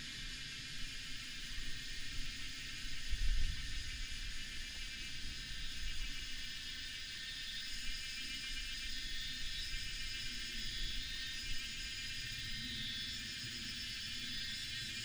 {"title": "大溪區環湖公路, Taoyuan City - Cicada cry", "date": "2017-08-09 18:17:00", "description": "Cicada cry, Traffic sound, aircraft", "latitude": "24.82", "longitude": "121.29", "altitude": "289", "timezone": "Asia/Taipei"}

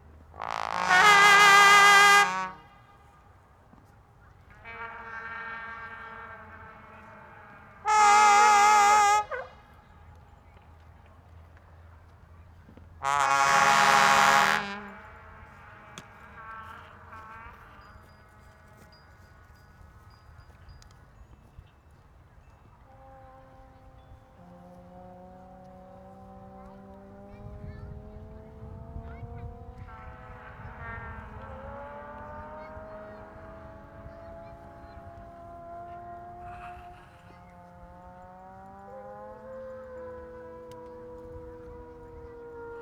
{"title": "Tempelhofer Feld, Berlin, Deutschland - sonic places performance", "date": "2012-08-18 15:30:00", "description": "performance during the Berlin sonic places event: Tempeltofu, by Tomomi Adachi, composition for voices, vuvuzelas, bicycles and trombones.\n(Sony PCM D50)", "latitude": "52.48", "longitude": "13.41", "altitude": "44", "timezone": "Europe/Berlin"}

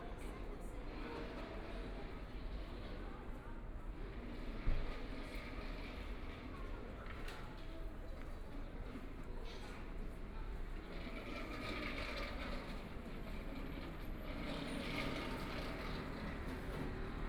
{"title": "East Beijing Road, Shanghai - The night streets sound", "date": "2013-11-30 20:18:00", "description": "Walking on the road, Binaural recording, Zoom H6+ Soundman OKM II", "latitude": "31.24", "longitude": "121.48", "altitude": "25", "timezone": "Asia/Shanghai"}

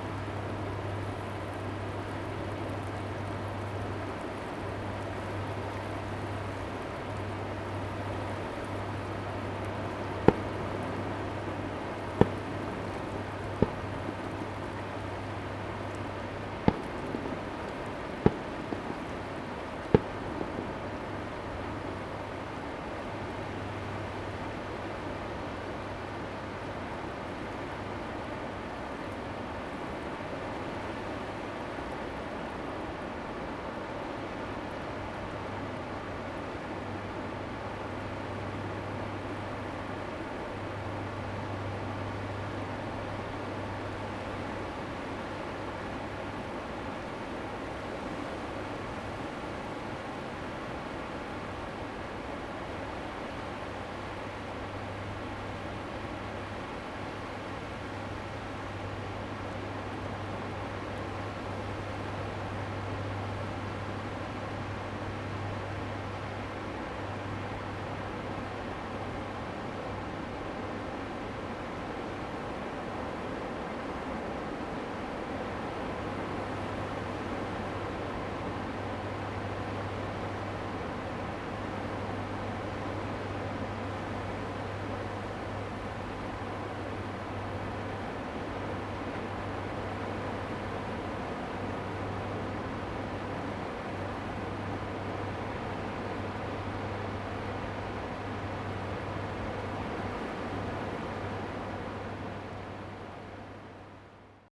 {"title": "白沙屯漁港, Tongxiao Township - On the beach of the fishing port", "date": "2017-03-09 11:47:00", "description": "On the beach of the fishing port, Sound of the waves, Fireworks sound\nZoom H2n MS+XY", "latitude": "24.57", "longitude": "120.71", "altitude": "12", "timezone": "Asia/Taipei"}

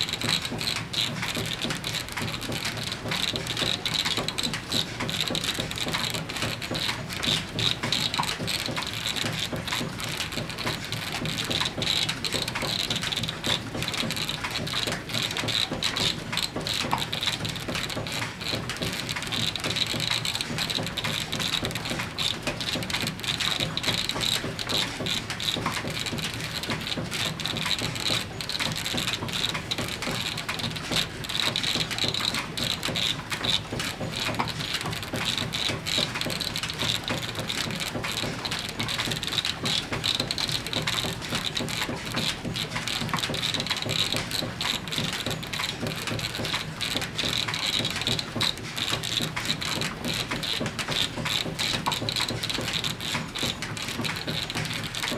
{"title": "enscherange, rackesmillen, gear drive", "date": "2011-09-23 21:35:00", "description": "Inside the mill at the ground floor. The sound of the central gear drive mechanic. Wooden tooth in a metall construction move textile belts.\nEnscherange, Rackesmillen, Zahnradgetriebe\nIm Erdgeschoß der Mühle. Die Klänge des zentralen Zahnradgetriebes. Hölzerne Zähne in einer eisernen Konstruktion bewegen Textile Antriebsbänder.\nÀ l’intérieur du moulin, au rez-de-chaussée. Le son du mécanisme à engrenages et courroie. Des dents en bois sur une construction en métal font avancer un tapis textile.", "latitude": "50.00", "longitude": "5.99", "altitude": "312", "timezone": "Europe/Luxembourg"}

